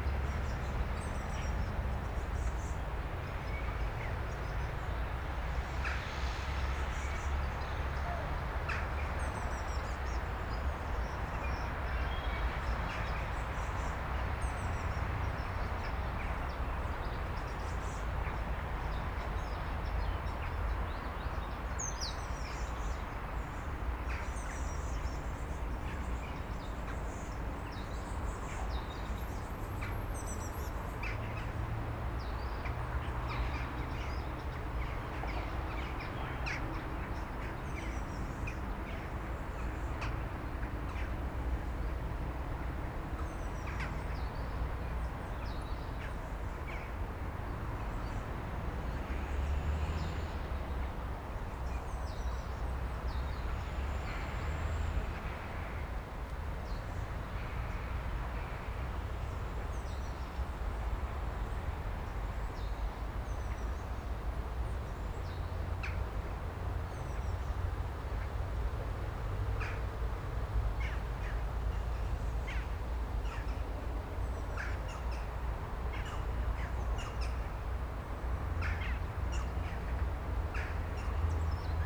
Piłsudskiego, Skwer za Planetarium - Stara Łyna
Recorded during audio art workshops "Ucho Miasto" ("Ear City"):